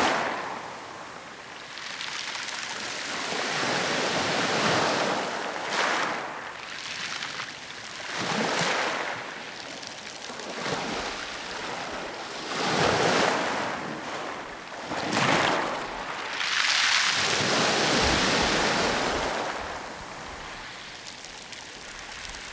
Plage Cap Mala, Cap D'Ail, France - close waves & pebbles
Sitting on a rock in front of the sea, you can hear the waves fizzing and then trickling through the pebbles as they recede.
Cap-d'Ail, France